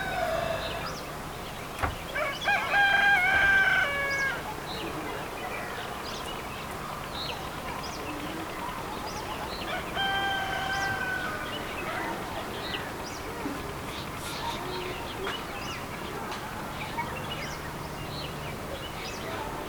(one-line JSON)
{"title": "Nullatanni, Munnar, Kerala, India - dawn in Munnar - over the valley 6", "date": "2001-11-05 07:47:00", "description": "dawn in Munnar - over the valley 6\nThis was it. Hope you like this audio trip over the valley of Munnar", "latitude": "10.09", "longitude": "77.06", "altitude": "1477", "timezone": "Asia/Kolkata"}